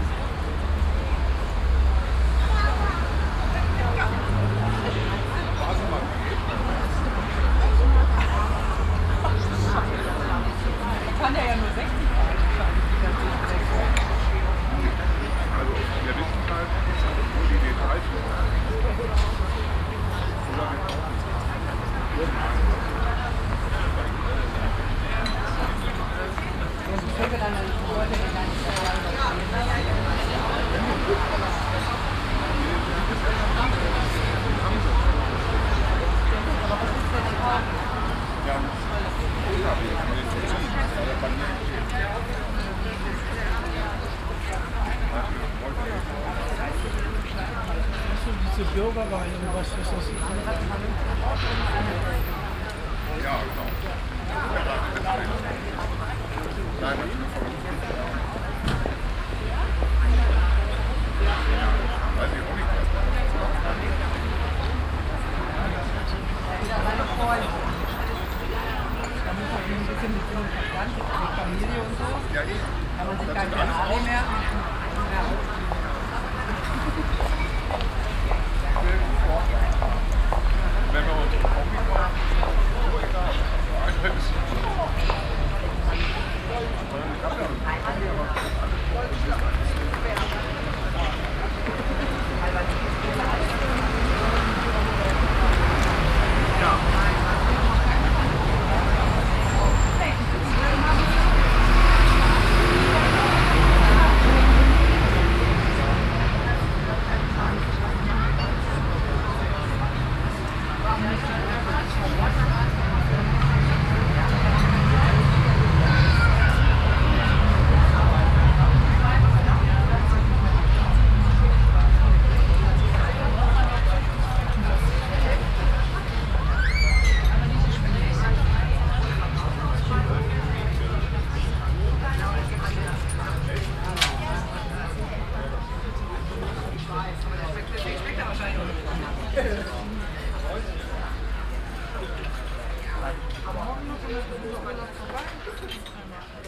Rüttenscheid, Essen, Deutschland - essen, rüttenscheider str, street and
Auf der Rüttenscheider Straße an einem milden Frühjahrstag. Der Klang des Strassenverkehrs und das Ambiente der Menschen in einem offenen Strassencafe.
At the Rüttenscher Street on a mild spring morning. The traffic sound and the sound of people in an open street cafe.
Projekt - Stadtklang//: Hörorte - topographic field recordings and social ambiences